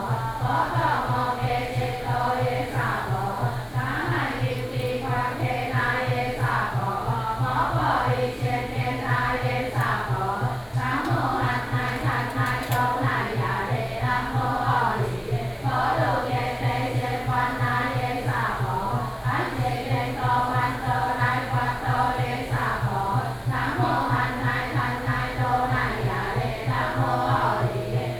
Mengjia Longshan Temple, Taipei - Chanting
hundreds of old woman are sitting in the temple chanting together, Sony PCM D50 + Soundman OKM II